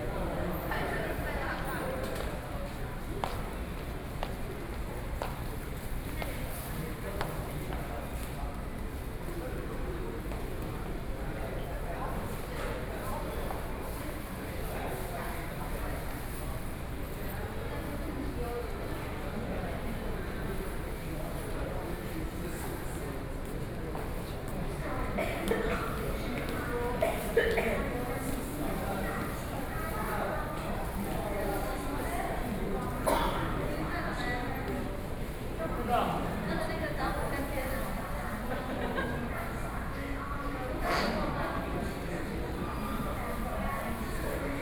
Chiayi Station, Chiayi City - Station hall
in the Station hall, Sony PCM D50 + Soundman OKM II